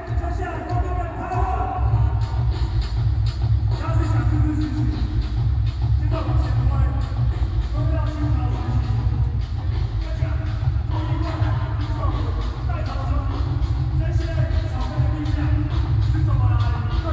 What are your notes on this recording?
Protest songs, Cries, Shouting slogans, Binaural recordings, Sony PCM D50 + Soundman OKM II